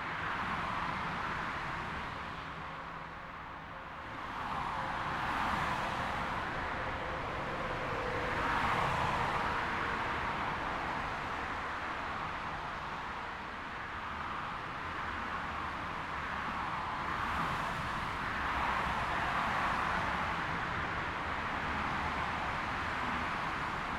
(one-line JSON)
{"title": "Nærum, Denmark - Vehicles on highway", "date": "2021-10-07 13:15:00", "description": "Recording of vehicles passing on highway under a concrete overpass/bridge. MS recording with a Zoom H5 and the MSH-6 head. Figure 8 microphone oriented parallel to the road. Converted to stereo. No extra processing.", "latitude": "55.80", "longitude": "12.53", "altitude": "18", "timezone": "Europe/Copenhagen"}